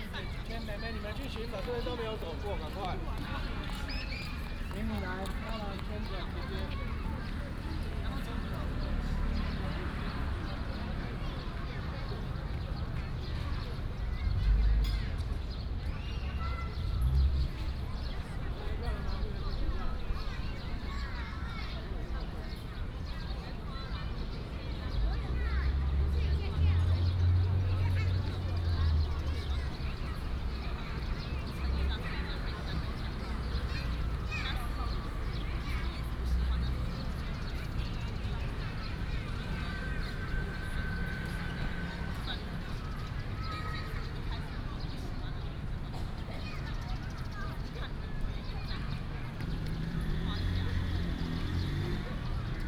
建成公園, Taipei City - in the Park
in the Park